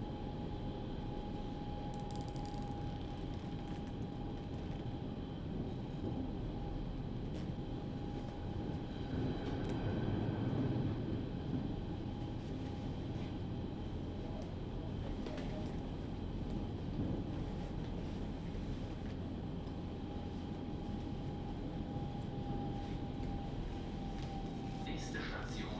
This recording was done inside the S5, with a zoom microphone. The recording is part of project where i try to capture the soundscapes of public transport ( in this case a train).